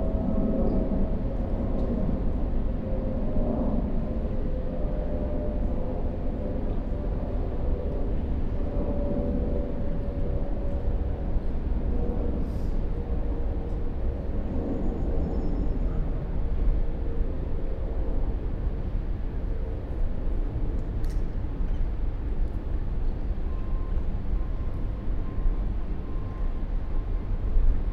Crossland Rd, Reading, UK - Abbey Amphitheatre
10 minute meditation sitting at the bottom of the small amphitheatre behind Reading Library (spaced pair of Sennheiser 8020s with SD MixPre6)
8 November 2017